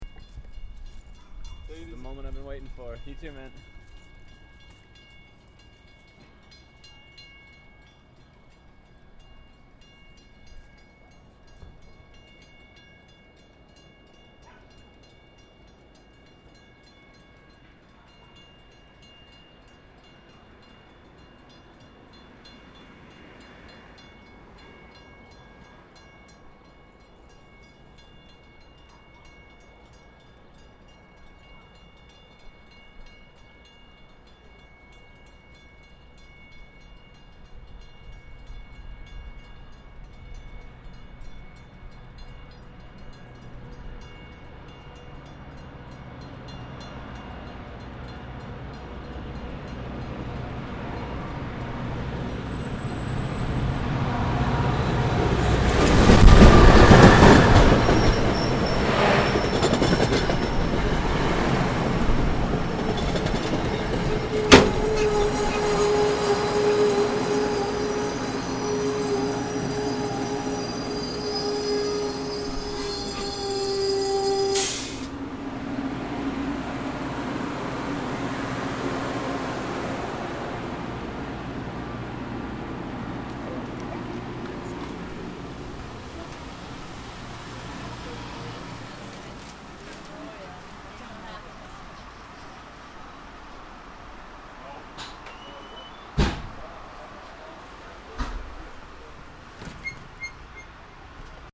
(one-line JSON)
{"title": "West Medford, Medford, MA, USA - outbound Lowell commuter rail arrives", "date": "2012-05-17 15:11:00", "description": "The 4:11pm outbound Lowell commuter rail arriving.\nSorry for the slight burst of wind right as the train arrives. I used the H4next to record this and the windscreen that came with it wasn't very good.", "latitude": "42.42", "longitude": "-71.13", "altitude": "12", "timezone": "America/New_York"}